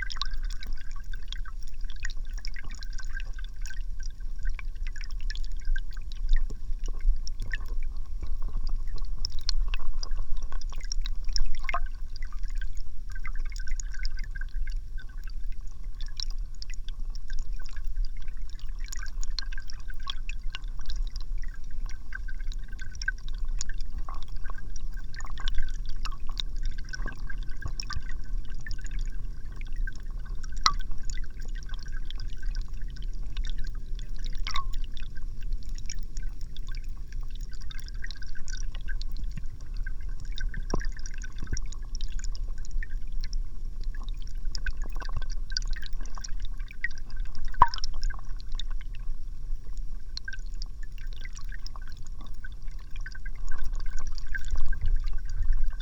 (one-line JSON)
{"title": "Ąžuolija, Lithuania, underwater", "date": "2022-03-26 13:10:00", "description": "Hydrophone in the river", "latitude": "55.46", "longitude": "25.58", "altitude": "119", "timezone": "Europe/Vilnius"}